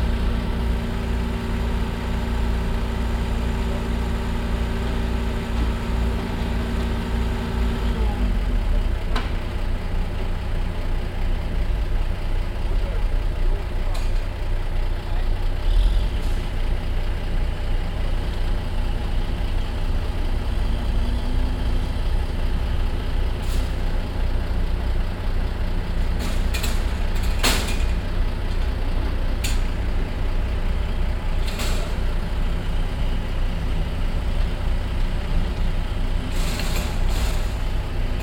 an strassenabaustelle mittags, bauarbeiten, stimmen, verkehr und das heranfahren eines lkw's
soundmap nrw - social ambiences - sound in public spaces - in & outdoor nearfield recordings